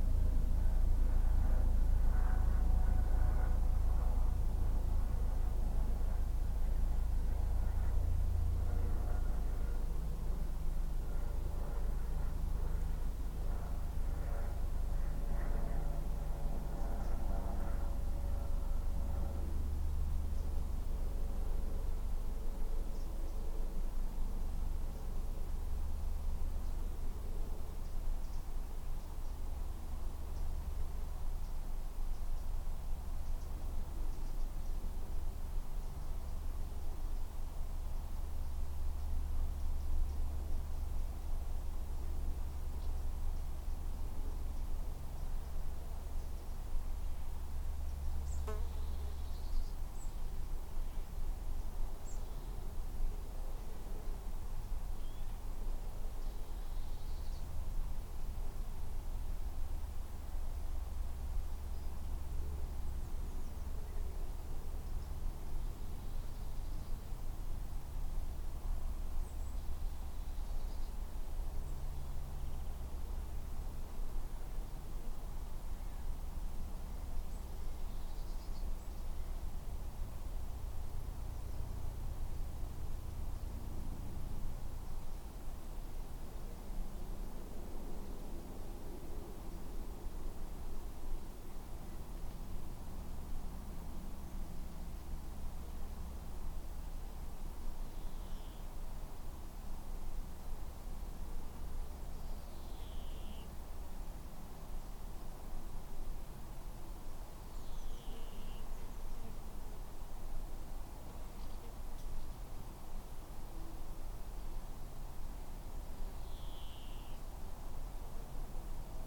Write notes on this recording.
This is the sound in a quiet corner of Port Meadow; one of the many places in Oxford which is extremely rural and where county life meets city life. Horses and cattle graze on the meadow; folk enjoy swimming and boating on the Thames; many people enjoy walking on the green; and large trains pass on the rail line directly next to it. In this recording I was trying to capture something of the ambience of this place; a very simple recording made with EDIROL R-09 in the grass underneath a tree.